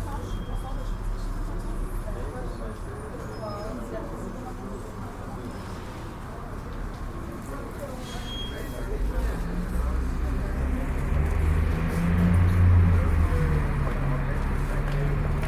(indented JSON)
{
  "title": "Montreal: 1000 de La Gauchetiere Terminus - 1000 de La Gauchetiere Terminus",
  "date": "2009-05-13 17:59:00",
  "description": "equipment used: Ipod Nano with Belkin Interface\nWaiting for the 55 bus in the indoor South Shore bus terminal, all lines delayed 20 minutes, 1000 De La Gauchetiere",
  "latitude": "45.50",
  "longitude": "-73.57",
  "altitude": "31",
  "timezone": "America/Montreal"
}